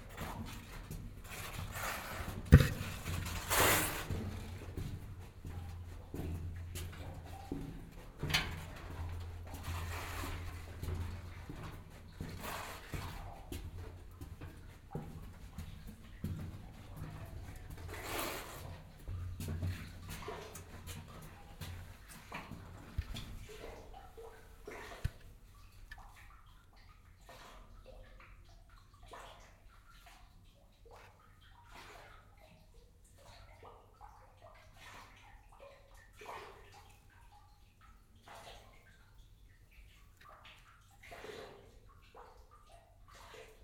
Meyersche Stollen, Aarau, Schweiz - Walk into the Meyersche Stollen
The city museum of Aarau made some parts of the tunnels accessible for the public. Here you here a walk through the narrow tunnel.
14 March 2016, 14:00